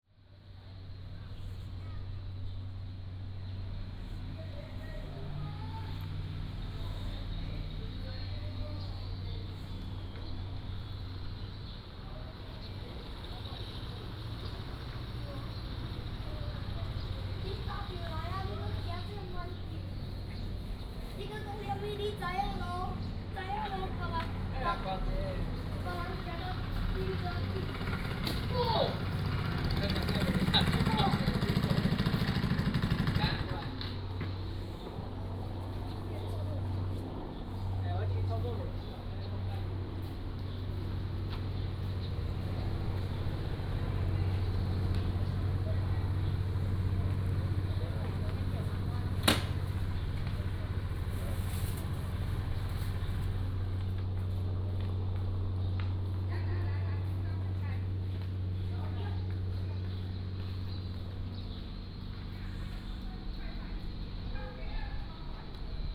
水仙宮, Hsiao Liouciou Island - In front of the temple
In the square in front of the temple